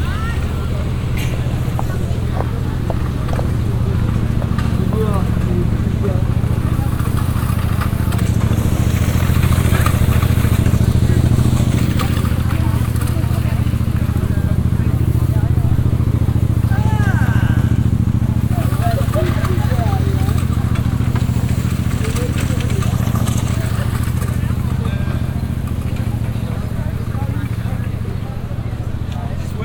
huge place in front of the centre pompidou museum, crowded by an international group of visitors, street musicians, comedians, painters and acrobats. a pavement cleaning machine passing by.
international cityscapes - sociale ambiences and topographic field recordings
Paris, France, 16 October 2009